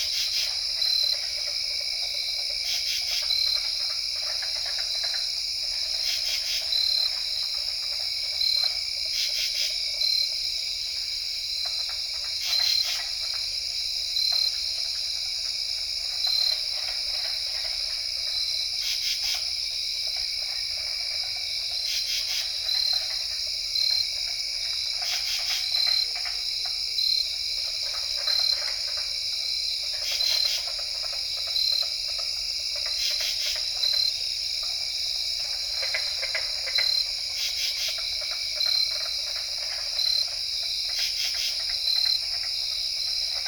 Wharton State Forst, NJ, USA - Bogs of Friendship, Part One
Katydids and carpenter frogs at the abandoned cranberry bogs of Friendship, NJ, located in Wharton State Forest, New Jersey; the heart of the pine barrens. This is an old recording, but I only recently discovered aporee. Microtrack recorder and AT3032 omnidirectionals